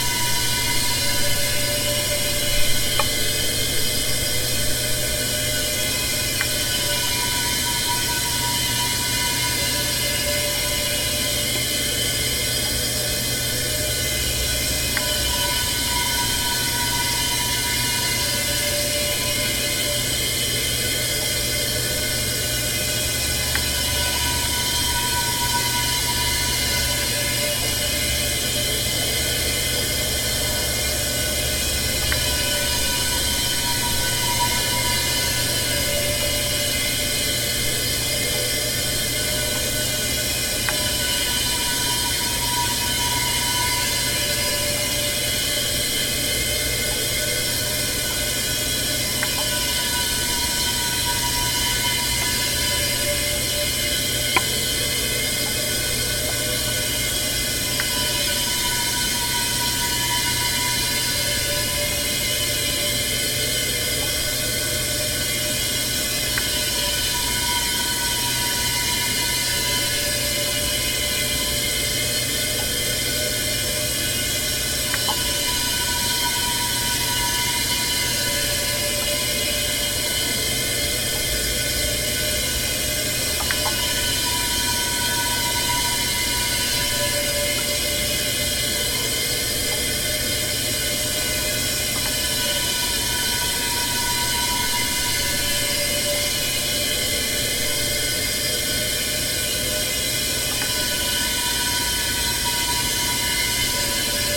Crescent Heights, Calgary, AB, Canada - Atco Gas thing in front of a parkade with strangely large security cameras
Hissing Atco Gas thing that turned on as I wandered out of the parkade. The parkade had two humongous black security cameras that intimidated me. The balcony on top had its lights on and there was a large indoor plant inside, and you could only see in when you stood a certain way because of the angled blinds.
Zoom H4n Recorder